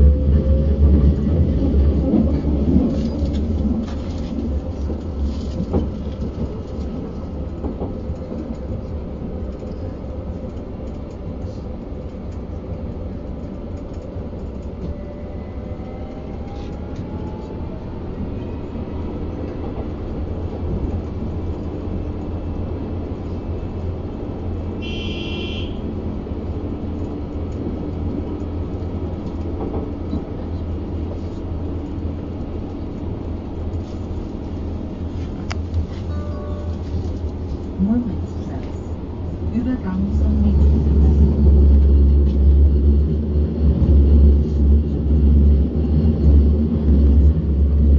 Moritzplatz Untergrund
Der Zugverkehr auf der Linie 8 ist zur Zeit unregelmäßig. Das Leben ist unregelmäßig.